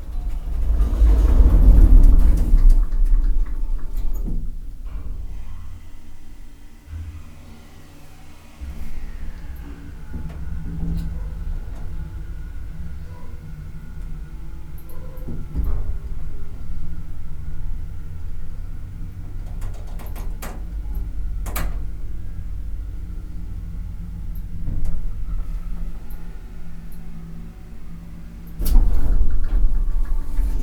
lippstadt, lippischer hof, elevator
the fascinating ans a little spooky sound of a hotel elevator driving up
soundmap nrw - social ambiences and topographic field recordings
29 September 2009, 18:56